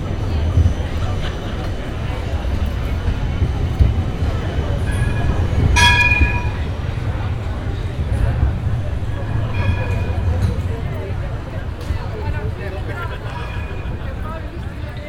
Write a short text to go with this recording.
a place in the center, open air bars, tourists, the tram station - trams passing by, city scapes international - social ambiences and topographic field recordings